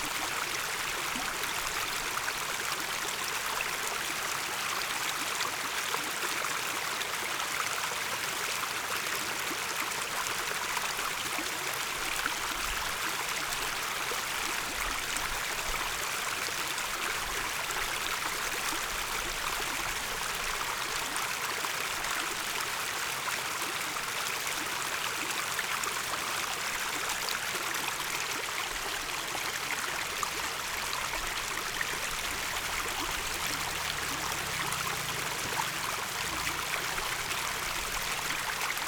Guanxi Township, Hsinchu County - The sound of water
The sound of water, Binaural recording, Zoom H6+ Soundman OKM II